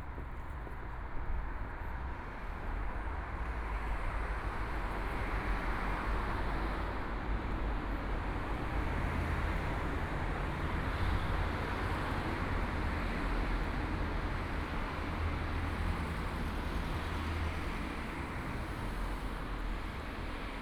Schwanthalerstraße, 慕尼黑德國 - walking in the Street
Walking on the streets at night, Traffic Sound, Voice from traffic lights
Munich, Germany, May 2014